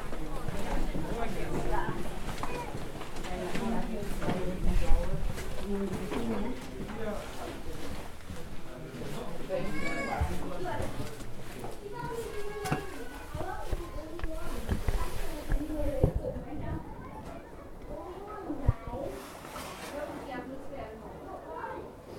Mutianyu, Great Wall, China
walking, great wall of China, people